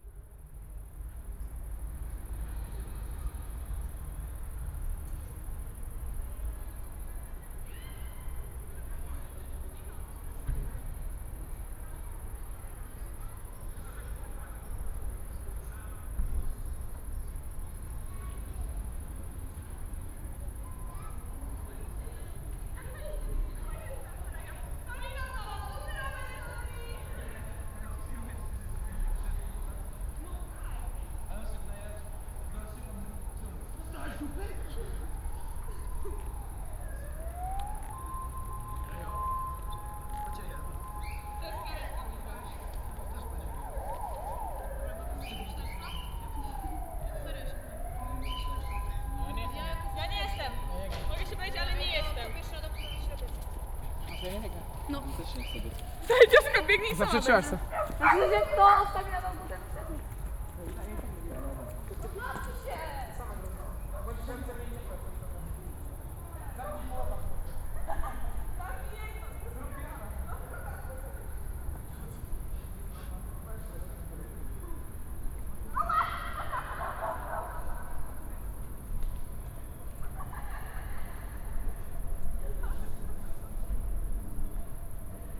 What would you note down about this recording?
(binaural recording) quiet summer evening ambience among the high apartment buildings (13 floors) of Sobieskiego housing complex. a group of cheerful teenagers approaching and passing right by the mics. then talking loudly on a nearby playground. ((roland r-07 + luhd PM-01 bins)